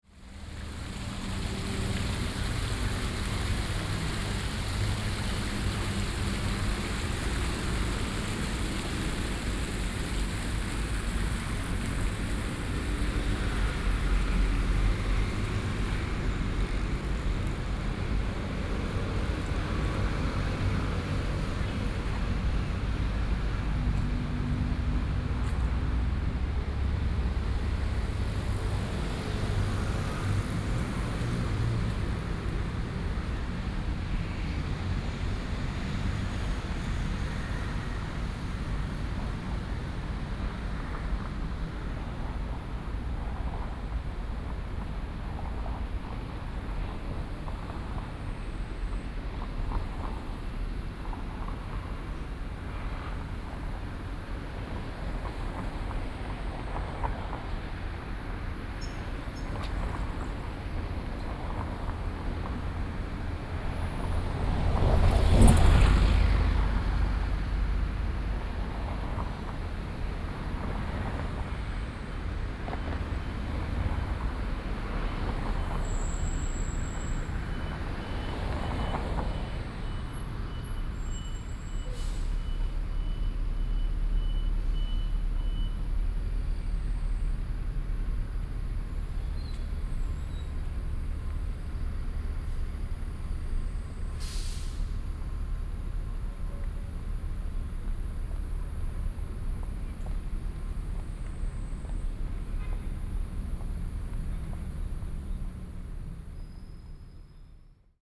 Renai Road, Da'an District - Walking through the partition Island
Walking through the partition Island, Traffic noise